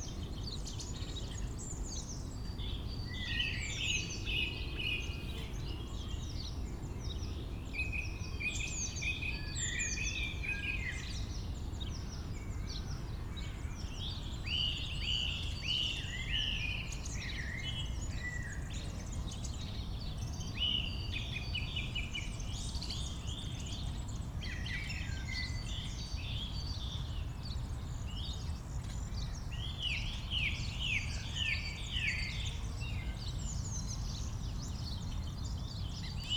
Friedhof Columbiadamm, Berlin, Deutschland - cemetery, spring ambience
cemetery, Friedhof Columbiadamm, Alter Garnisonsfriedhof, spring ambience, many bird live here.
(Sony PCM D50, DPA4060)
Berlin, Germany, 19 April